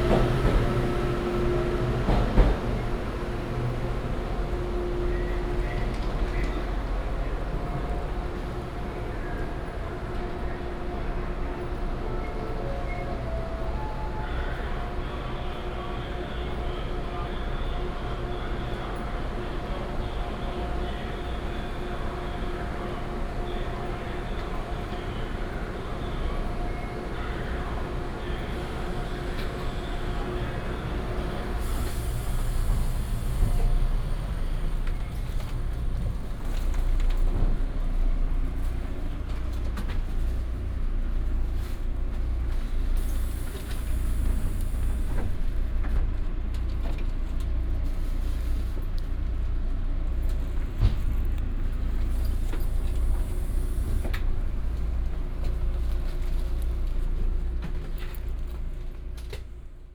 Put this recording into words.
Walk into the Station platform, Station Message Broadcast